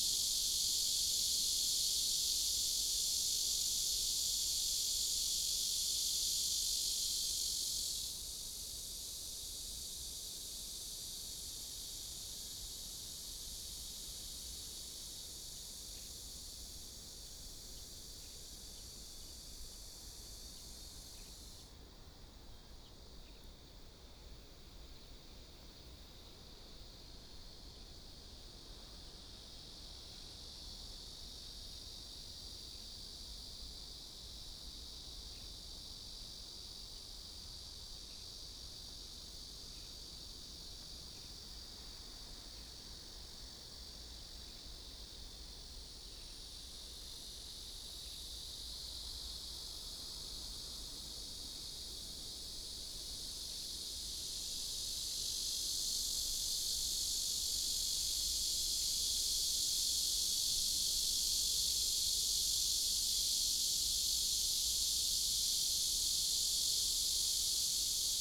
壯圍鄉東港村, Yilan County - In windbreaks
In windbreaks, Near the sea, Cicadas sound, Birdsong sound, Small village
Sony PCM D50+ Soundman OKM II